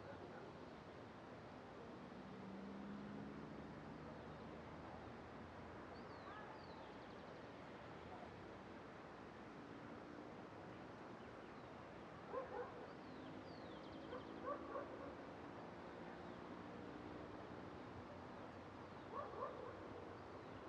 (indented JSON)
{
  "title": "Cra., Bogotá, Colombia - San Jose de Bavaria",
  "date": "2021-05-12 12:27:00",
  "description": "Mountain between \"Cerro la Conejera\" and \"San José de Bavaria\" to the northwest of Bogotá. Environment close to the city, wind, birds, barking dogs, motorcycles, buses and cars traveling on the road in the distance.",
  "latitude": "4.77",
  "longitude": "-74.07",
  "altitude": "2594",
  "timezone": "America/Bogota"
}